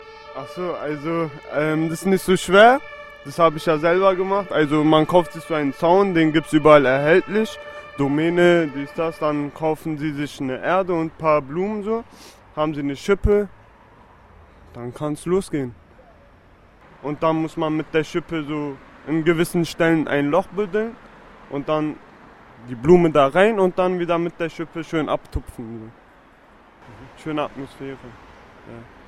Schwedenstrasse, Wedding Berlin
Man describing how to plant a flower garden around a street tree.
Berlin, Germany